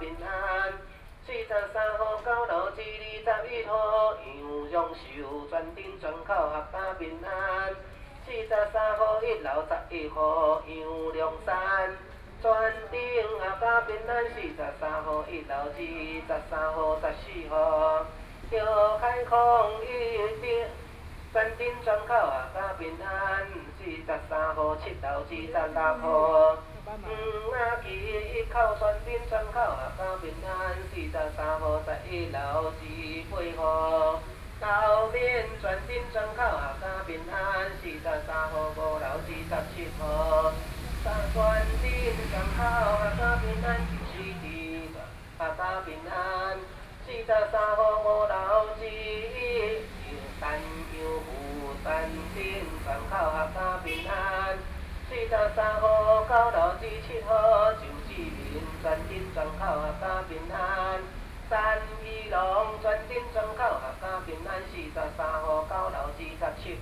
Xinsheng N. Rd, Taipei City - Pudu
Traditional Ceremony, Daoshi, Read singing the name of the household, Sony PCM D50 + Soundman OKM II
Taipei City, Taiwan